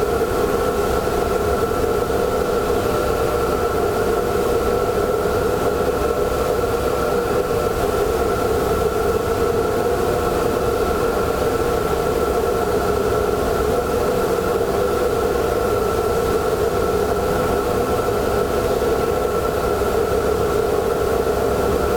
Seraing, Belgique - Enormous pumps
This is an archive recording of the several enormous pumps, which were turning when this factory was active. It was pumping water in the "Meuse", in aim to give water to this enormous blast furnace.